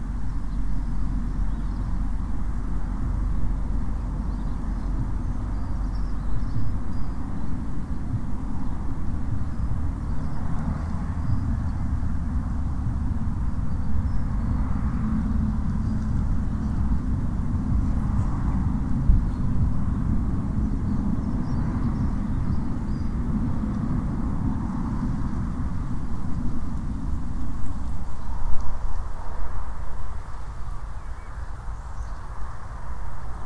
Aldingham, UK - Garden sounds
in my garden: traffic, birds, breeze blowing through the grasses and plants and distant planes. Recorded under a bright blue sky with a Tascam DR-05